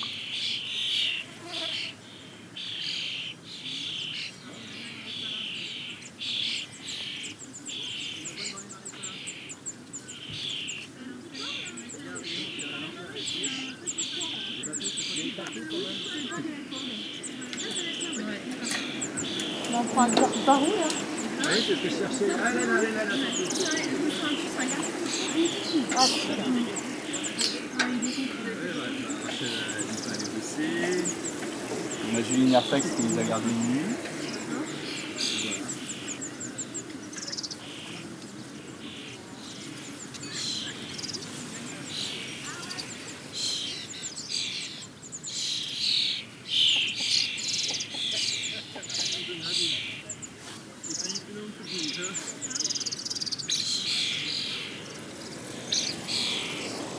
La Couarde-sur-Mer, France - Common Starlings
A big Common Starling colony, singing in the marshland. A lot and a lot of wind in the grass. And also, a very consequent mass of tourists cycling.